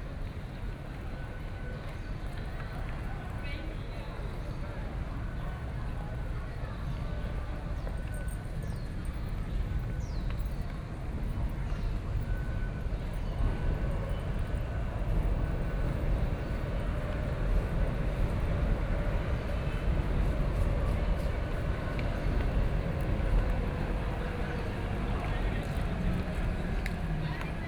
Beitou Station, Taipei City - Outside the station
Outside the station, Hot weather, Traffic Sound
Taipei City, Taiwan